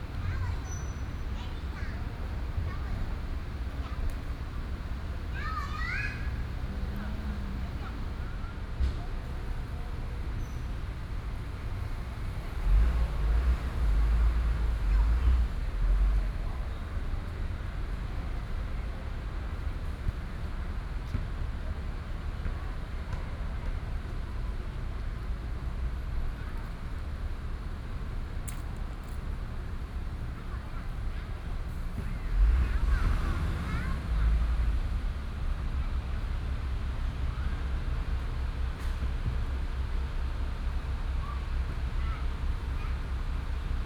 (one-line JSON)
{"title": "Dongfeng Park, Da'an Dist. - in the Park", "date": "2015-06-18 19:18:00", "description": "Hot weather, in the Park, Traffic noise, the garbage truck", "latitude": "25.04", "longitude": "121.54", "altitude": "22", "timezone": "Asia/Taipei"}